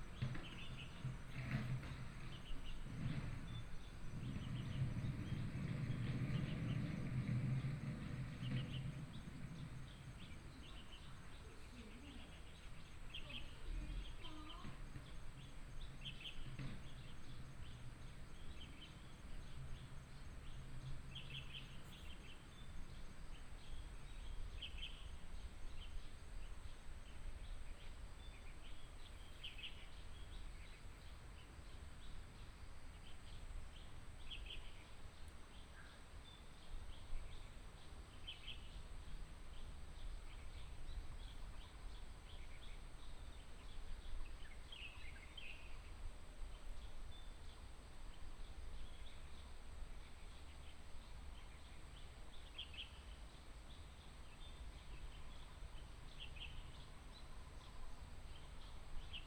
{"title": "大武山生態教育館, Taimali Township - Bird and Traffic sound", "date": "2018-04-01 16:47:00", "description": "Traffic sound, Bird cry", "latitude": "22.53", "longitude": "120.94", "altitude": "56", "timezone": "Asia/Taipei"}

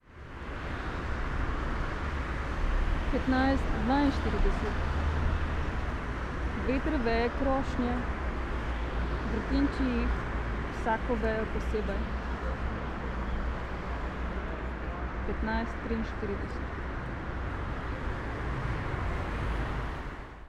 {"title": "slovenska cesta, ljubljana - street reading-fragment 3", "date": "2013-06-07 19:08:00", "description": "this sonorous fragment is part of Sitting by the window, on a white chair. Karl Liebknecht Straße 11, Berlin, collection of 18 \"on site\" textual fragments ... Ljubljana variation\nSecret listening to Eurydice 10, as part of Public reading 10", "latitude": "46.05", "longitude": "14.50", "altitude": "310", "timezone": "Europe/Ljubljana"}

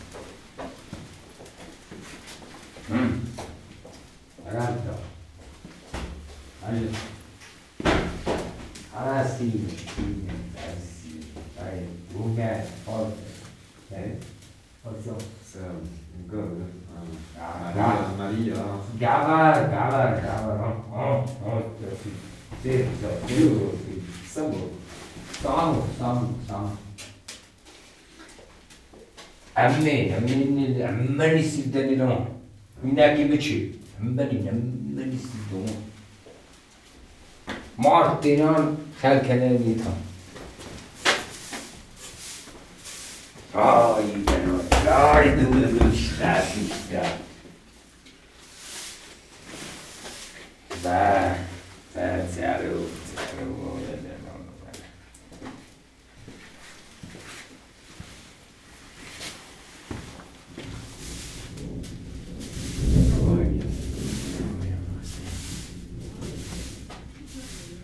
{"title": "Vank, Arménie - Praying in the monastery", "date": "2018-09-04 16:20:00", "description": "After a terrible storm, some farmers went on the top of this volcano. There's a monastery and they came to pray. This recording is the time they pray inside the church. As you can hear, there's no celebration. They simply light candles and say good words to the holy virgin. Their manner to pray is completely simple.", "latitude": "40.39", "longitude": "45.03", "altitude": "2455", "timezone": "Asia/Yerevan"}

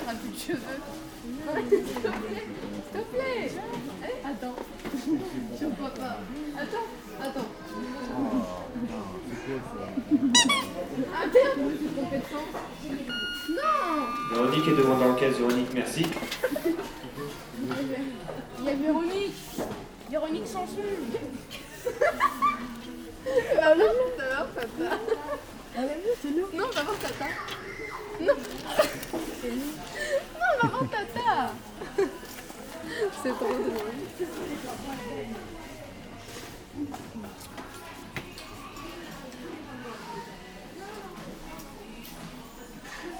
{"title": "Maintenon, France - Supermarket", "date": "2016-12-24 15:30:00", "description": "Recording of the clients in the supermarket just before Christmas.", "latitude": "48.58", "longitude": "1.57", "altitude": "132", "timezone": "GMT+1"}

